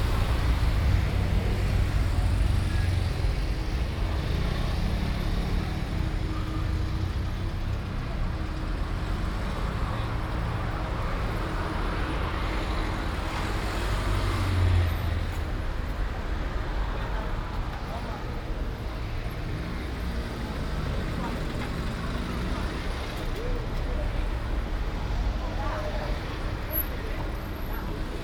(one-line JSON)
{"title": "Ascolto il tuo cuore, città. I listen to your heart, city, Chapter LXXXVII - Monday at Piazza Vittorio with Frecce Tricolori passage in the time of COVID19 soundscape", "date": "2020-05-25 14:58:00", "description": "\"Monday at Piazza Vittorio with Frecce Tricolori passage in the time of COVID19\" soundscape\nChapter LXXXVII of Ascolto il tuo cuore, città. I listen to your heart, city\nMonday, May 25th 2020. Piazza Vittorio Veneto, Turin, with Frecce Tricolori aerobatic aerial patrol seventy-six days after (but day twenty-two of Phase II and day nine of Phase IIB and day three of Phase IIC) of emergency disposition due to the epidemic of COVID19.\nStart at 2:58 p.m. end at 3:28 p.m. duration of recording 30’’00”\nCoordinates: lat. 45.06405, lon. 7.69656", "latitude": "45.06", "longitude": "7.70", "altitude": "228", "timezone": "Europe/Rome"}